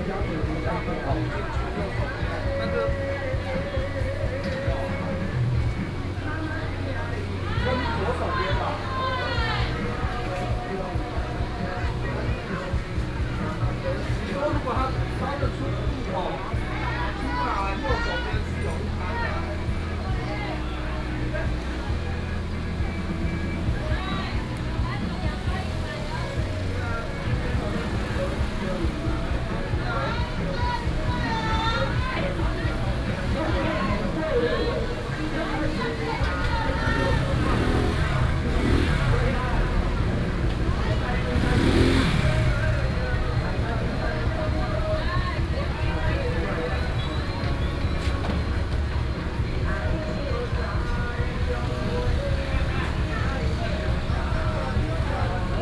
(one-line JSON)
{"title": "中正路32號, Toufen City - vendors peddling", "date": "2017-08-30 10:33:00", "description": "vendors peddling, Traditional Markets, Binaural recordings, Sony PCM D100+ Soundman OKM II", "latitude": "24.68", "longitude": "120.91", "altitude": "26", "timezone": "Asia/Taipei"}